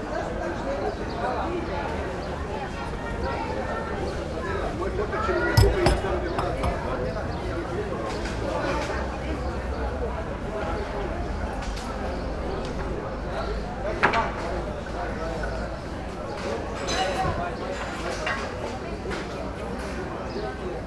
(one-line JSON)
{"title": "Maribor, Vodnikov Trg, market - cafe Branjevka", "date": "2014-06-08 11:07:00", "description": "sunday market, white tables, blue chairs, coffee cup from Yugoslavia times, pigeons ... bistro Branjevka is one of few old style cafes in town, women carries coffee also to the vegetables and fruit sellers, some of them farmers, most of them re-sellers ...", "latitude": "46.56", "longitude": "15.64", "altitude": "264", "timezone": "Europe/Ljubljana"}